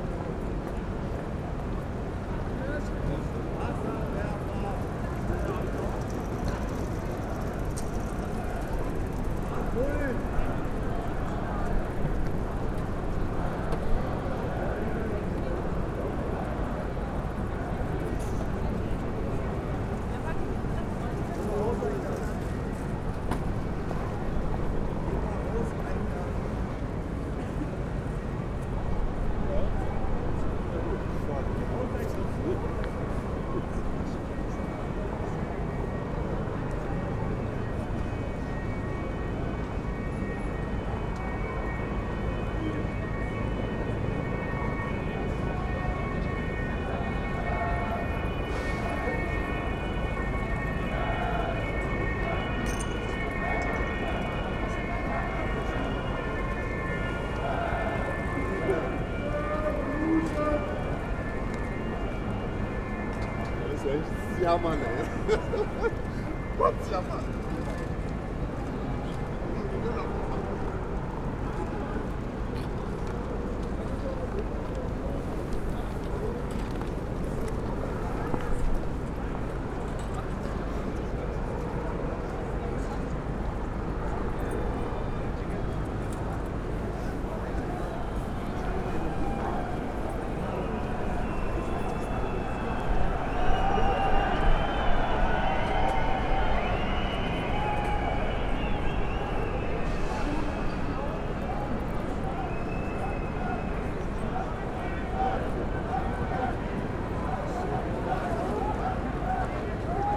berlin: hermannplatz - the city, the country & me: 1st may riot
police cars, vans, trucks and water guns waiting on the revolution, chanting demonstrators, police helicopters, sound of police sirens and bangers, people leaving and entering the subway station
the city, the country & me: may 1, 2011